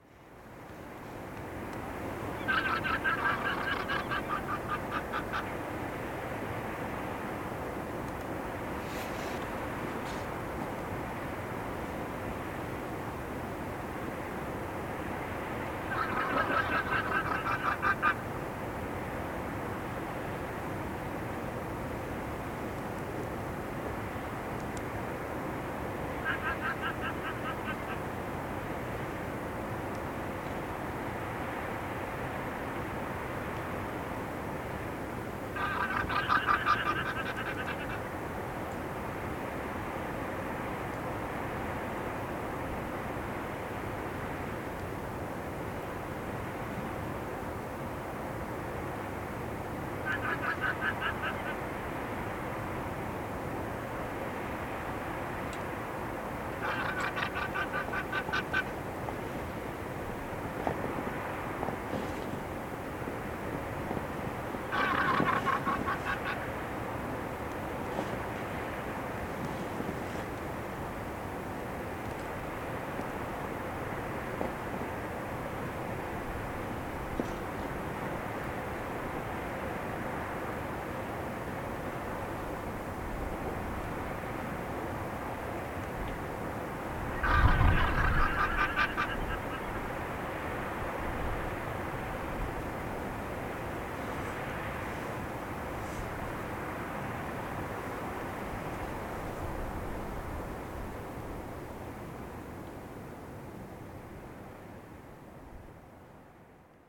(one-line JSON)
{"title": "Yendegaia Nacional Park, Magallanes y de la Antártica Chilena, Chile - storm log - glacier lake", "date": "2021-02-25 17:14:00", "description": "Rockwell Kent Trail glacier lake and guanaco calls, wind SW 15 km/h, ZOOM F1, XYH-6 cap\nAlmost 100 years ago the artist and explorer Rockwell Kent crossed the Baldivia Chain between Seno Almirantazgo and the Beagle Channel via the Lapataia Valley. His documentation* of the landscape and climate is one of the first descriptions of this passage and serves as an important historic reference.\nThe intention of this research trip under the scientific direction of Alfredo Prieto was to highlight the significance of indigenous traces present in Tierra del Fuego, inter-ethnic traces which are bio-cultural routes of the past (stemming from the exchange of goods and genes). In particular, we explored potential indigenous cultural marks that Rockwell Kent described, traces that would connect the ancestors of the Yagán community with the Kawesqar and Selk’nam in the Almirantazgo Seno area.\n*Rockwell Kent, Voyaging, Southward from the Strait of Magellan, G.P.", "latitude": "-54.62", "longitude": "-69.00", "altitude": "575", "timezone": "America/Punta_Arenas"}